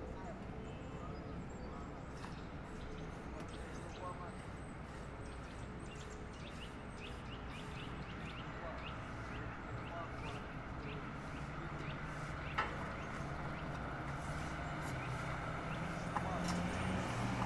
Avenue du Temple, Lausanne, Suisse - ambiance extérieure
rumeur urbaine depuis fenêtre captée par couple Schoeps